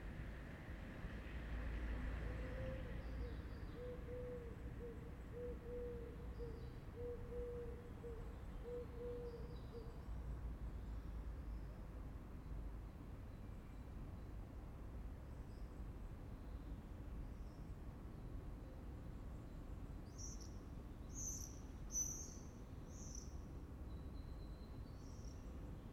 Rue Alcide dOrbigny, La Rochelle, France - P@ysage Sonore - Landscape - La Rochelle COVID 9 am jogging with bell tower
at 1'53 : 9 am jogging with bell tower, and frog and avifauna Jardin des Plantes
4 x DPA 4022 dans 2 x CINELA COSI & rycote ORTF . Mix 2000 AETA . edirol R4pro
27 April, ~09:00